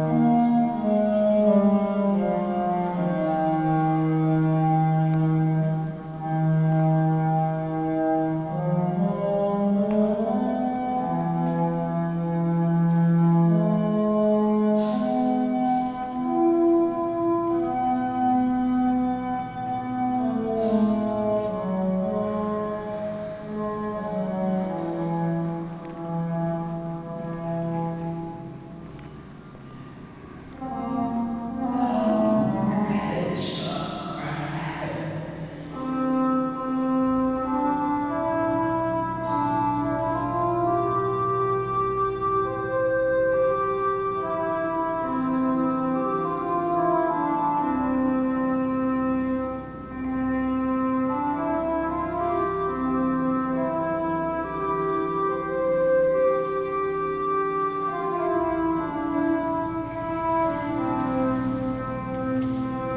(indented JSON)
{
  "title": "Strada Izvor, București, Romania - exhibtion in the center for contemporary art",
  "date": "2017-09-26 16:48:00",
  "description": "Ambience inside the exhibition with Gary Hills and Popilotti Rist pieces",
  "latitude": "44.43",
  "longitude": "26.09",
  "altitude": "83",
  "timezone": "Europe/Bucharest"
}